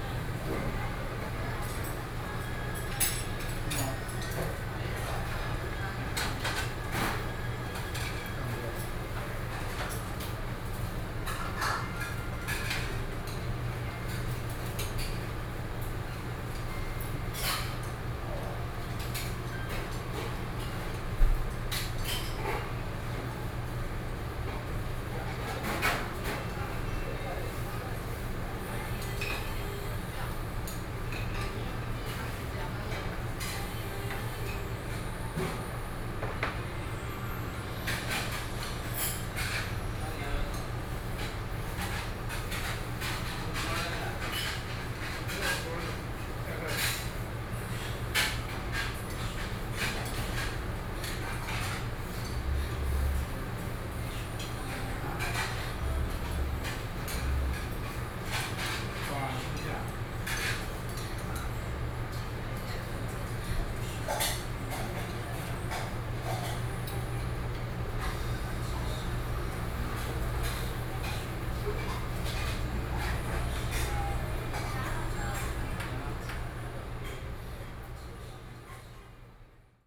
Beitou, Taipei - In the restaurant
In the restaurant, Sony PCM D50 + Soundman OKM II